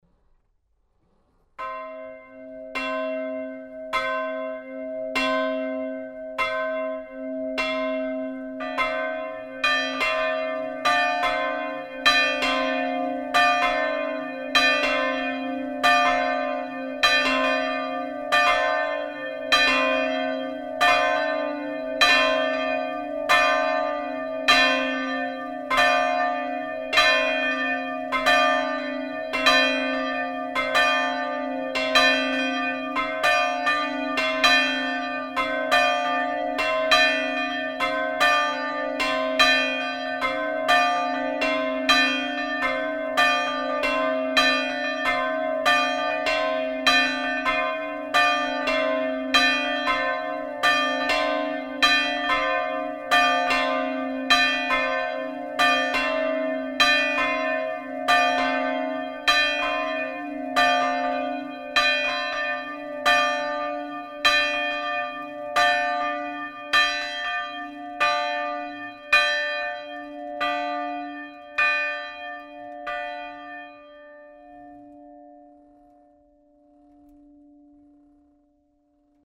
Ath, Belgique - Lanquesaint bells
The Lanquesaint two bells ringed manually in the bell tower. These bells are especially very very bad !
Ath, Belgium, July 2012